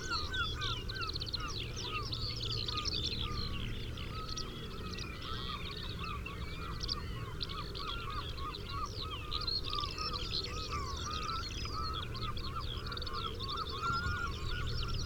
Green Ln, Malton, UK - open fields ... skylark springboard ...
open fields ... skylark springboard ... mics to minidisk ... song and calls from ... skylark ... corn bunting ... carrion crow ... linnet ... lapwing ... herring gull ... red-legged partridge ... pheasant ... rook ...